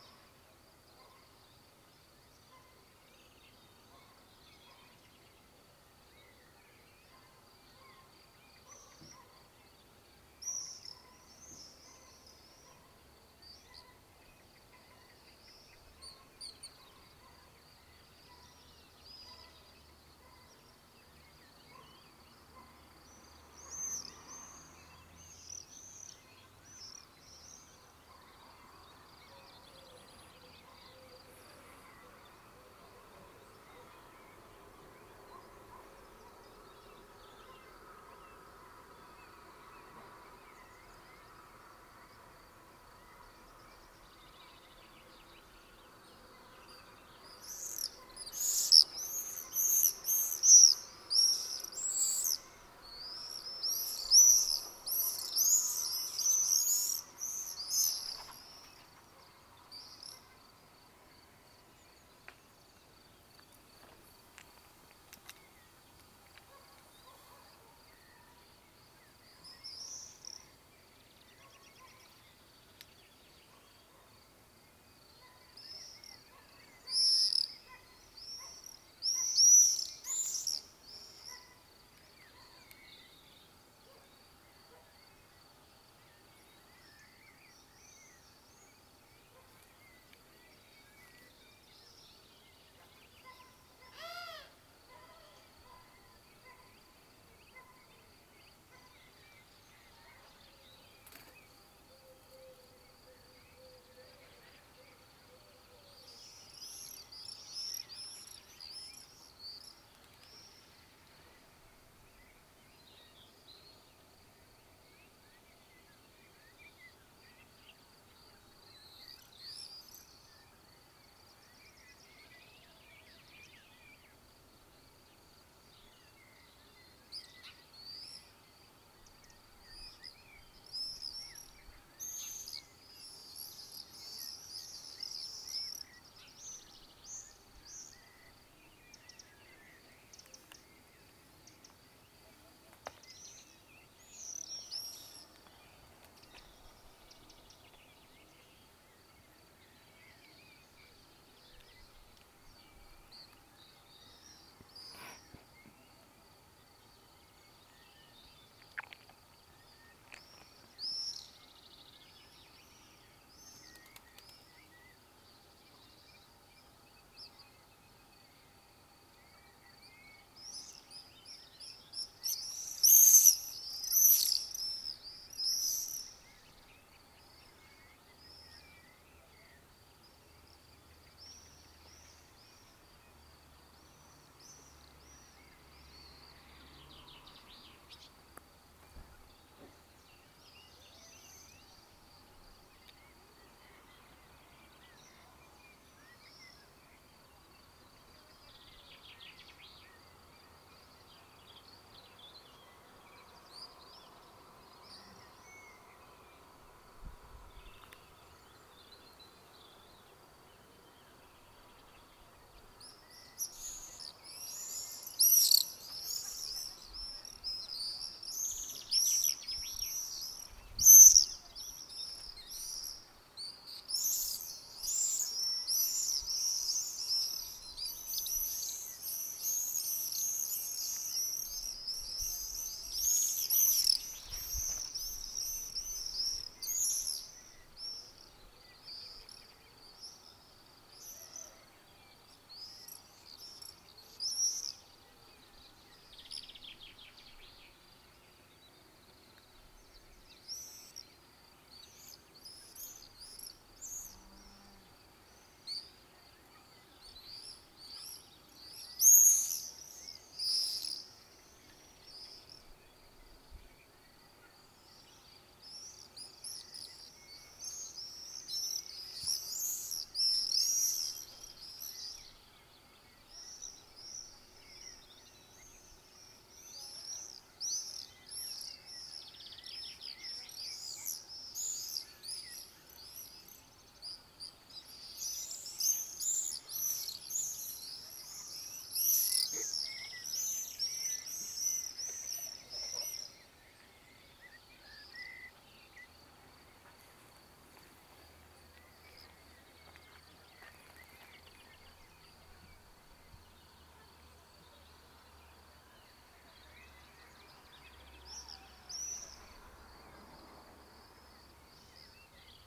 Prince, France - volée d'hirondelles
volée d'hirondelles captée avec un olympus LS-5 et des micros binauraux SP-TFB-2